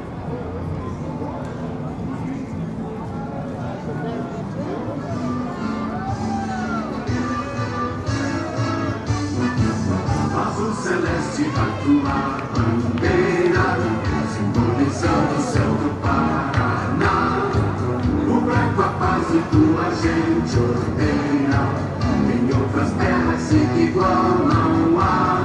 Calçadão de Londrina: Ação comercial do Londrina Esporte Clube - Ação comercial do Londrina Esporte Clube / Londrina Esporte Clube commercial action
Panorama sonoro: ação comercial do time de futebol Londrina Esporte Clube no Calçadão com uso de um megafone. De uma loja localizada em frente à ação, vendedores reproduziam músicas e o hino do clube a partir do equipamento de som instalado no estabelecimento.
Sound Panorama: commercial action of the soccer team Londrina Esporte Clube in the Boardwalk with the use of a megaphone. From a store located in front of the action, vendors played music and the clubs anthem from the sound equipment installed in the establishment.
- Centro, Londrina - PR, Brazil, 2 September 2017